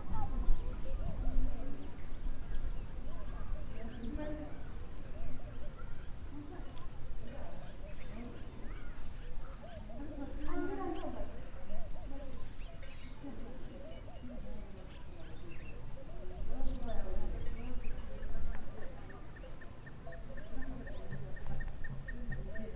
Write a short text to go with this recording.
… I wanted to capture the peaceful sounds of the bush in Sikalenge before our meeting with the Women’s Forum started… but it turned out not all that peaceful…